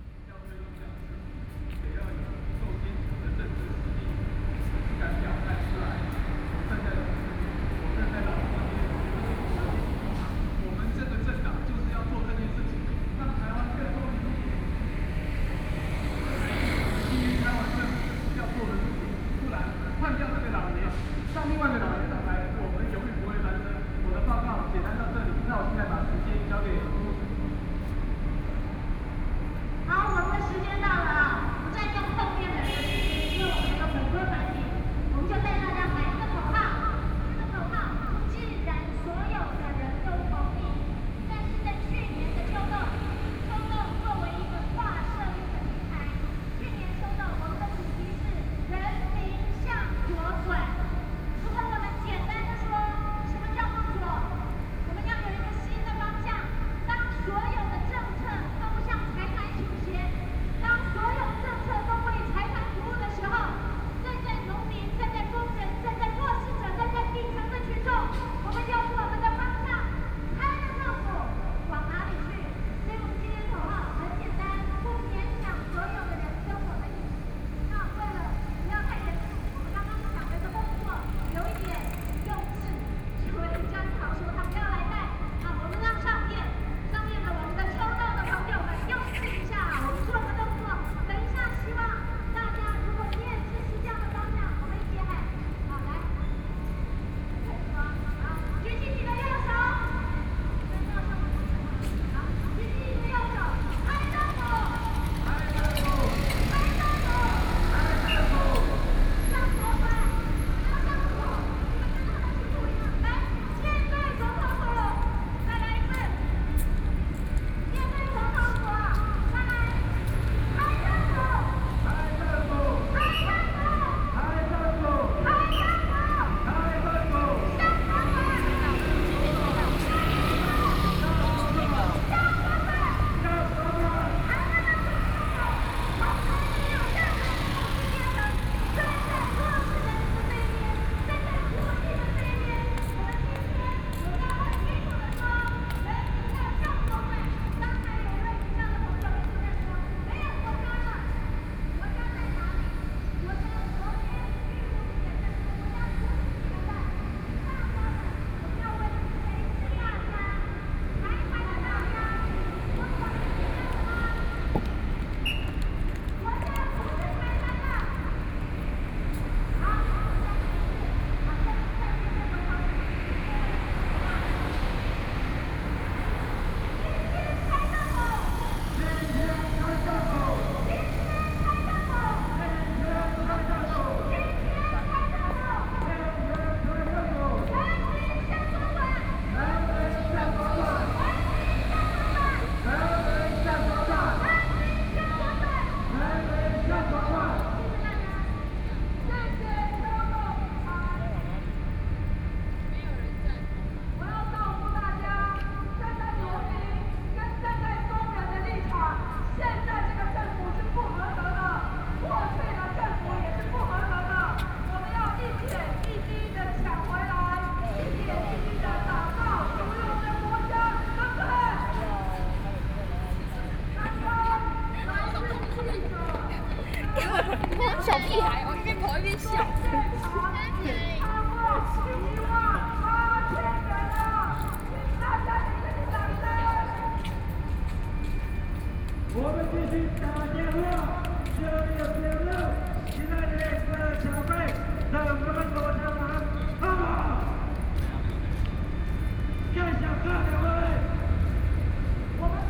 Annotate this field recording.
Distant voices of protest, Sports center rear ventilation equipment noise, Traffic Noise, The pedestrian, Sony PCM D50 + Soundman OKM II